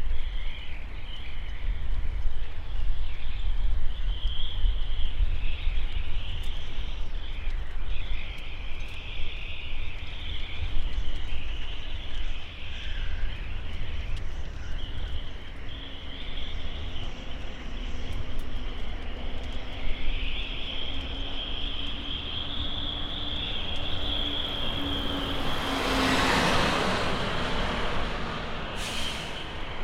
Portland Marina - wind at Portland Marina